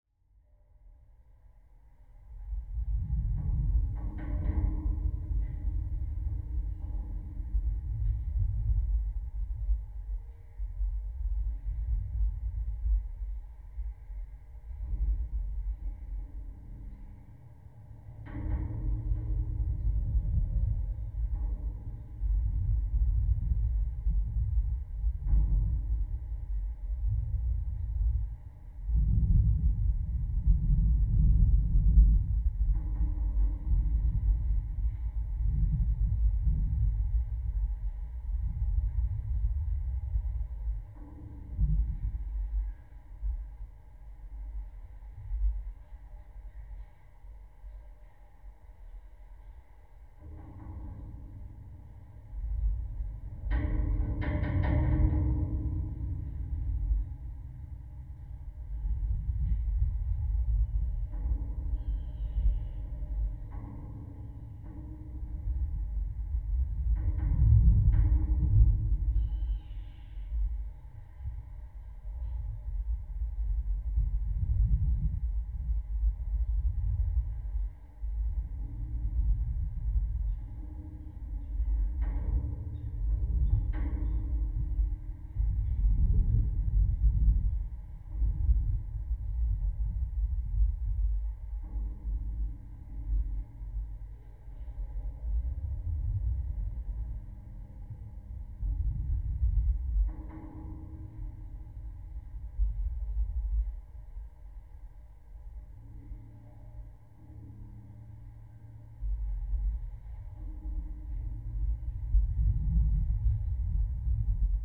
Klaipėda, Lithuania, pier's metallic
contact microphones upon a metallic construction on a pier
5 May 2016, 17:35